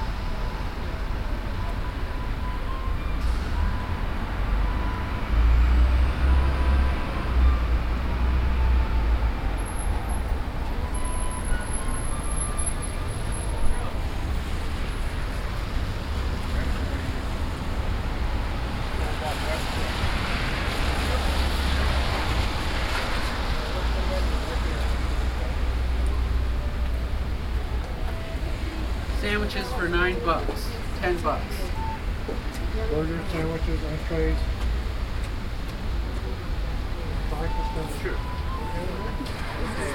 Washington DC, 11th St NW, In front of Hotel Harrington
USA, Virginia, Washington DC, Flute, Door, Road traffic, Binaural
DC, USA, November 2011